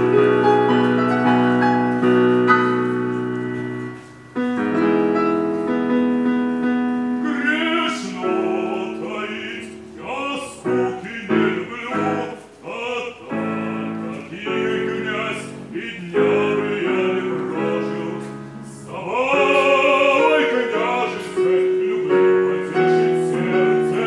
ул. Короленко, Нижний Новгород, Нижегородская обл., Россия - сhalyapin
this sound was recorded by members of the Animation Noise Lab by zoom h4n
street concert "chaliapin on the balcony"